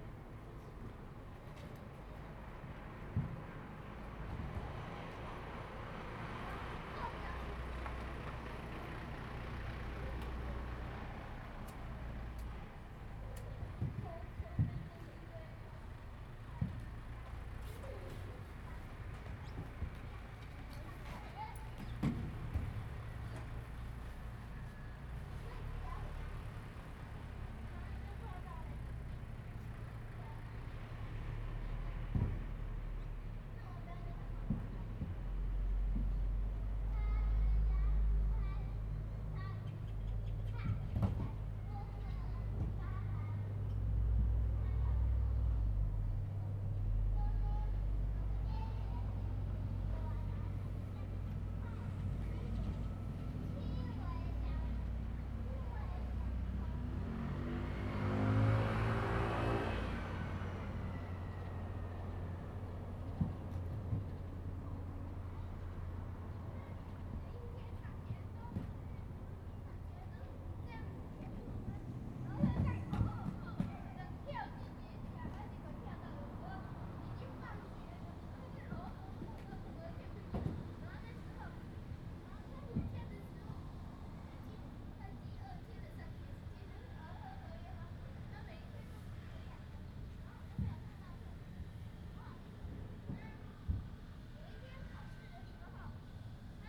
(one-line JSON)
{"title": "北寮村保安宮, Huxi Township - In the square", "date": "2014-10-21 15:50:00", "description": "In the square, in front of the temple, Small fishing village\nZoom H2n MS+XY", "latitude": "23.60", "longitude": "119.67", "altitude": "8", "timezone": "Asia/Taipei"}